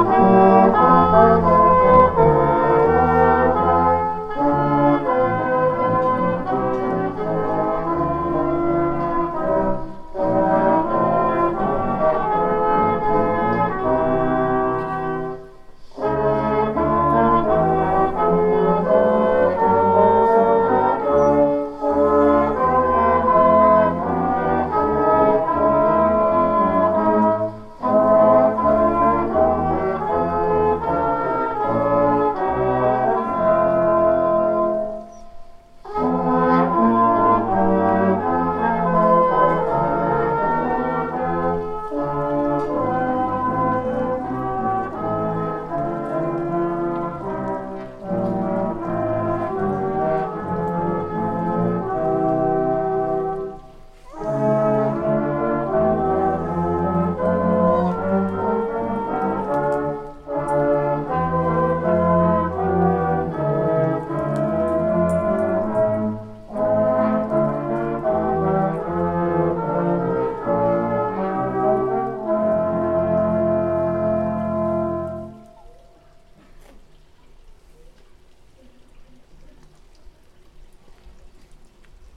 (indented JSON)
{
  "title": "Speeches and Brass Band Bad Orb Part 2 - 2018 Gedenken an das Progrom 1938 Teil 2",
  "date": "2018-11-09 18:25:00",
  "description": "Brass band and speeches in front of the former synagoge to commemorate the progrom in 1938 that expelled the jews from the small town Bad Orb, this year with a reflection on the World War One. Part two.\nRecorded with DR-44WL.",
  "latitude": "50.23",
  "longitude": "9.35",
  "altitude": "177",
  "timezone": "Europe/Berlin"
}